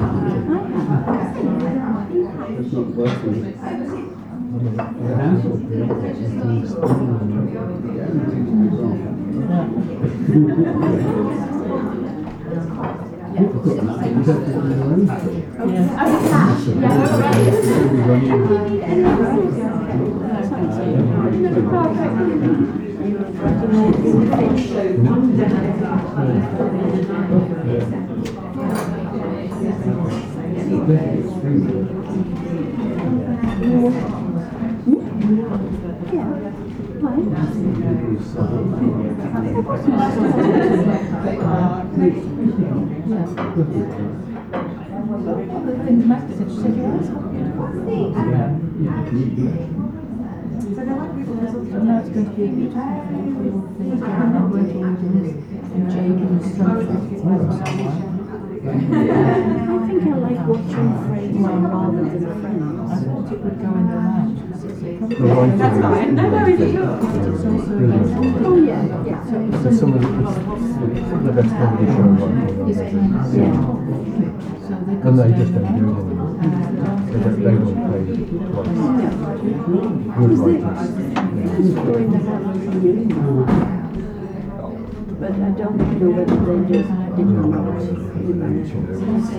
Hotel Restaurant, Aldeburgh, UK
Voices and random ambient sounds in a nice hotel restaurant during a busy lunchtime. Rather muffled sound due to my recorder and rucksack being laid on the floor by the window which seems to have emphasised the low frequencies. I applied a little low cut to help but not very successfully.
MixPre 6 II and two Sennheiser MKH 8020s